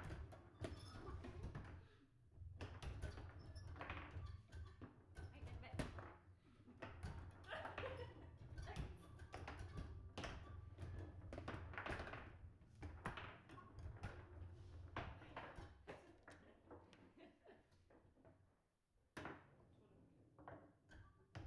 Humlebæk, Danmark - football table
Krogerup students playing on the football table at the bar